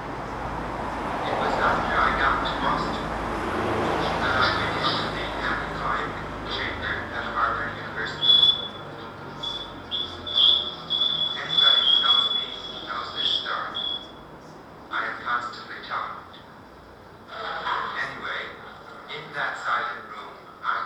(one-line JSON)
{"title": "berlin: bürknerstraße - bring it back to the people: nearby aporee project room", "date": "2012-09-05 22:15:00", "description": "transistor radio on the pavement during udo noll's pirate radio transmission of the aporee john cage birthday event on fm 98,8 and the performance of 4'33 at aporee project room (here you hear excerpts of the film \"4 american composers. vol. 1: john cage\" directed by peter greenaway in 1983)\nbring it back to the people: september 5, 2012", "latitude": "52.49", "longitude": "13.42", "altitude": "45", "timezone": "Europe/Berlin"}